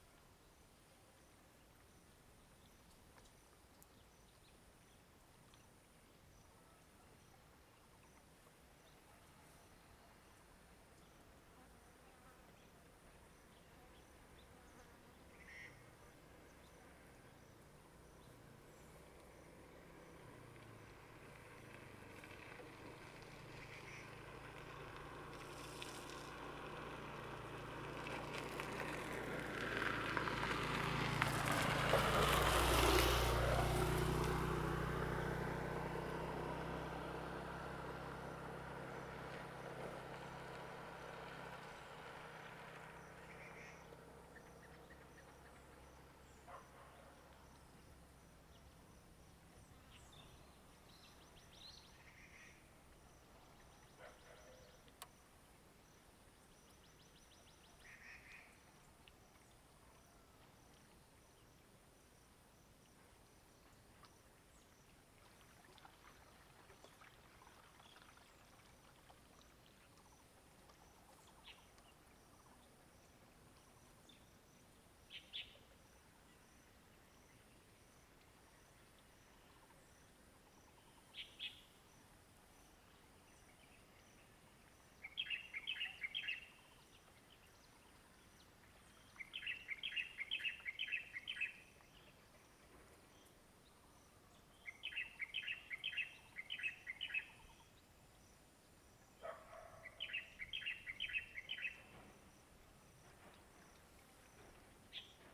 大鳥溪, Daniao, Dawu Township - On the dry river
In the valley area, Bird call, Dog barking, traffic sound, On the dry river
Zoom H2n MS+XY